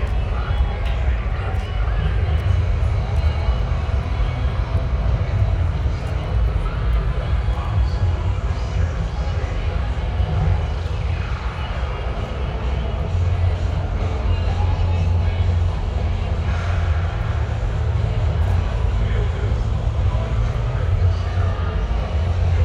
At night from the border of the fun fair, just behind the fence, musics are mixing together.
Recorded by an ORTF setup Schoeps CCM4 x 2 on a Cinela Suspension + Windscreen
Sound Devices mixpre6 recorder
GPS: 50.107878,14.425690
Sound Ref: CZ-190302-009